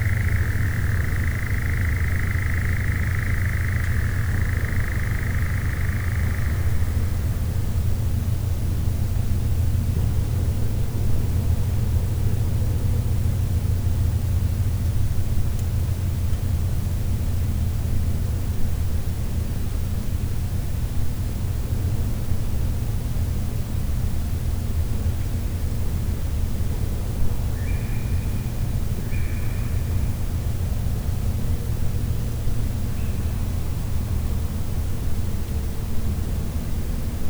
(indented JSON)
{
  "title": "Old airport, Taavi Tulev, Summernight",
  "latitude": "59.53",
  "longitude": "26.29",
  "altitude": "43",
  "timezone": "Europe/Berlin"
}